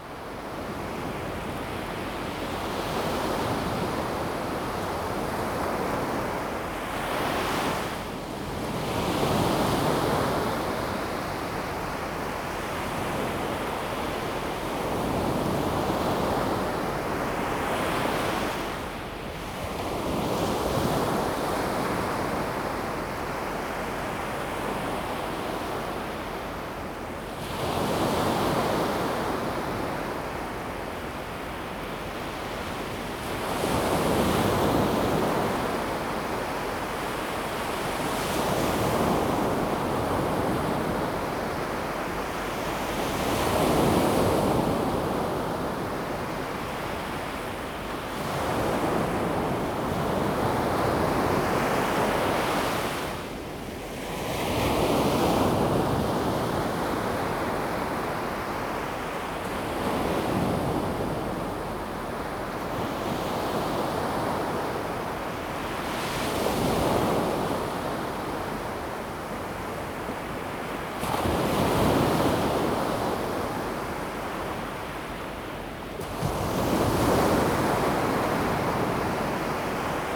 Sizihwan, Gushan District, Kaohsiung - on the beach
Sound of the waves, on the beach
Zoom H2n MS+XY